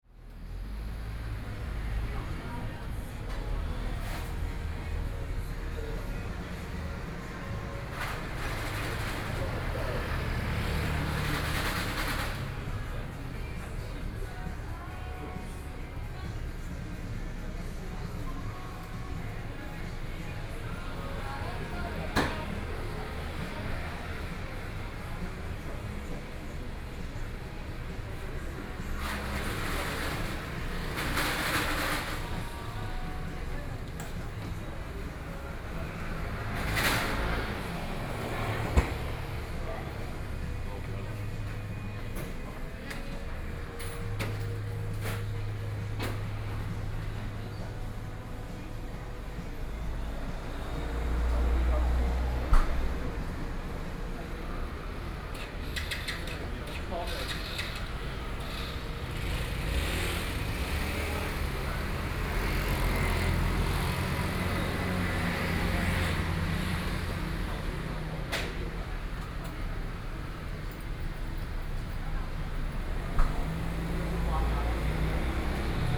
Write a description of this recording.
At the roadside, Various shops voices, Traffic Sound